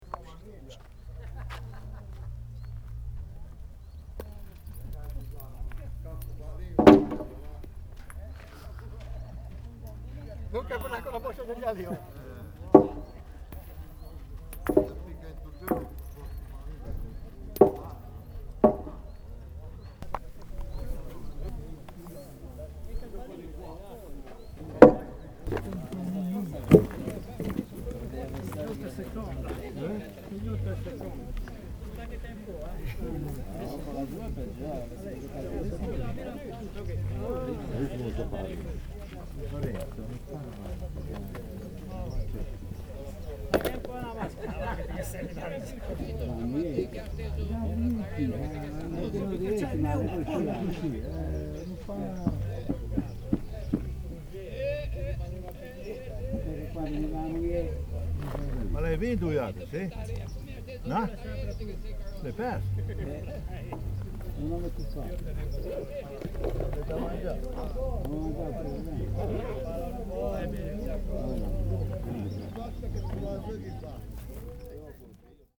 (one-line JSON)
{"title": "alto, sport arena, boule play", "date": "2009-07-25 00:28:00", "description": "regional boule competition - single sounds of metal balls hitting a wooden panel, throws and voices\nsoundmap international: social ambiences/ listen to the people in & outdoor topographic field recordings", "latitude": "44.11", "longitude": "8.00", "altitude": "650", "timezone": "Europe/Berlin"}